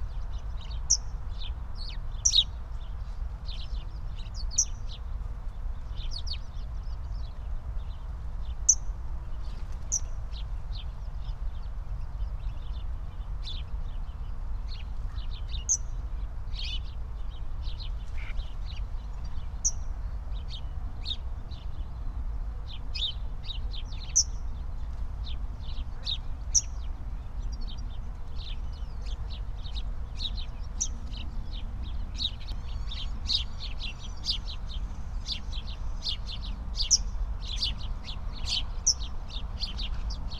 Berlin, Tempelhofer Feld - field ambience /w birds
08:06 Berlin, Tempelhofer Feld - field ambience
Deutschland, 27 July, 08:06